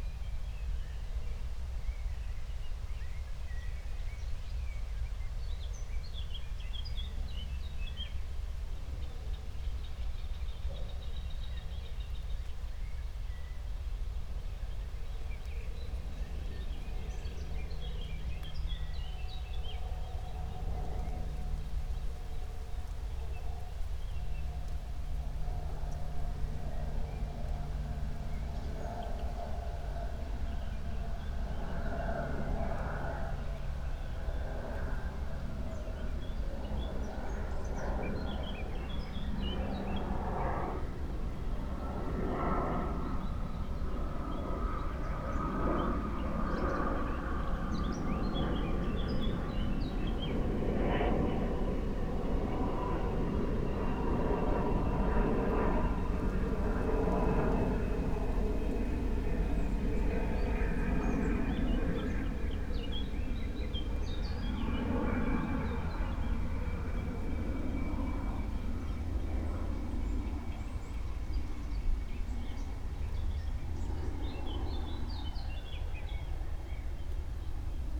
Berlin, Buch, Mittelbruch / Torfstich - wetland, nature reserve
15:00 Berlin, Buch, Mittelbruch / Torfstich 1